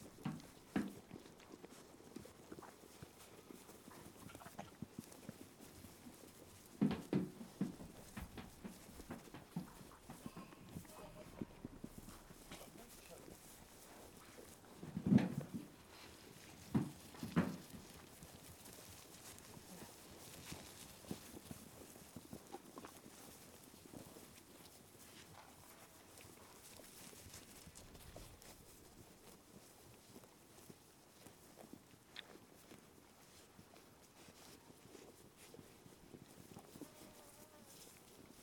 This is the sound of Pete Glanville bringing his small flock of Shetland sheep into their pen, and giving them some supplementary organic feed. You can hear in the recording the sheep munching their food and occasionally kicking the food trough, Pete talking to the sheep, and one of the sheep greedily eating its food so fast that it makes itself cough and splutter! It was a beautiful, sunny day when we made this recording, and Pete helped me immensely by explaining the schedule for the sheep, so that I could try to fit my recordings around their daily routine. Every day they come down to have their feed at around 10am, so I arrived just in time to record this. They are beautiful small short-tailed sheep, in many different colours, and Pete is one of several farmers who are pursuing an organic route for the rearing and processing of Shetland wool. Recorded with Audio Technica BP4029 and FOSTEX FR-2LE.
3 August 2013, 10:07